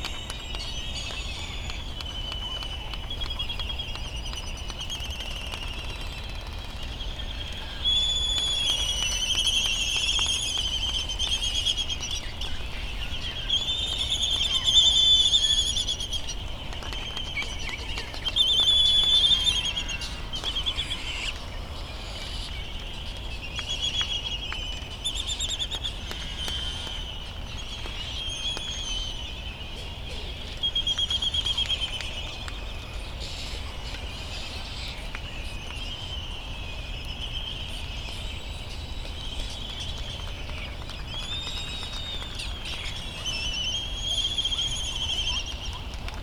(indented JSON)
{
  "title": "United States Minor Outlying Islands - Laysan albatross soundscape ...",
  "date": "2012-03-13 04:06:00",
  "description": "Laysan albatross soundscape ... Sand Island ... Midway Atoll ... laysan albatross calls and bill clapperings ... Bonin petrel calls ... open lavaliers ... background noise ... warm with a slight breeze ...",
  "latitude": "28.22",
  "longitude": "-177.38",
  "altitude": "9",
  "timezone": "Pacific/Midway"
}